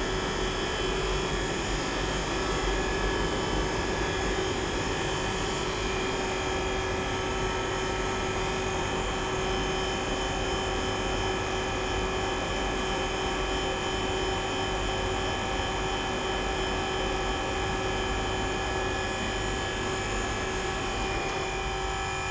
Hotel Parc Belle-Vue, Luxemburg - aircon
various sonic pattern of an aircon, above the hotel garage
(Olympus LS5, Primo EM172)
Luxemburg City, Luxembourg, 5 July